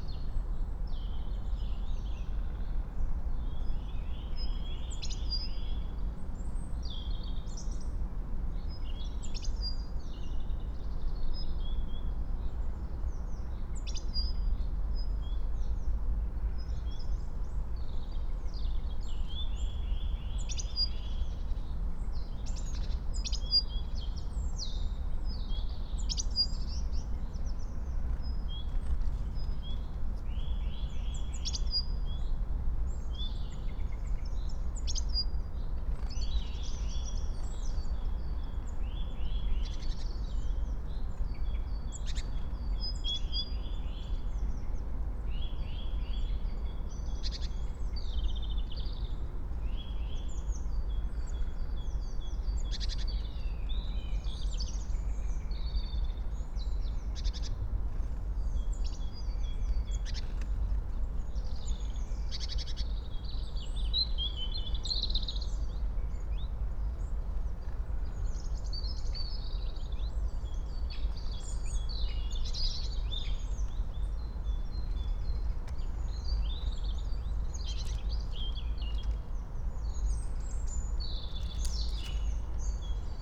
09:46 Berlin, Königsheide, Teich
(remote microphone: AOM 5024HDR/ IQAudio/ RasPi Zero/ 4G modem)